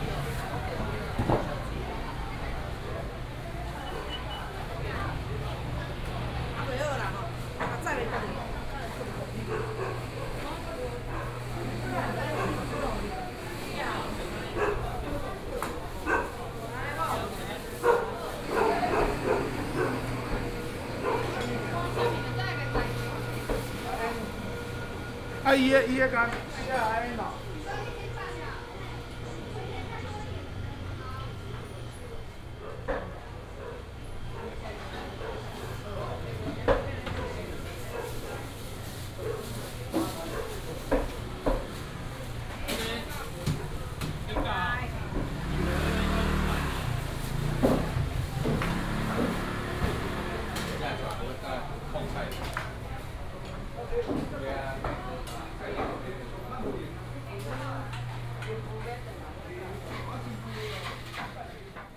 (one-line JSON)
{"title": "彰化三民批發市場, Changhua City - Walking in the wholesale market", "date": "2017-03-18 09:14:00", "description": "Walking in the wholesale market", "latitude": "24.09", "longitude": "120.55", "altitude": "22", "timezone": "Asia/Taipei"}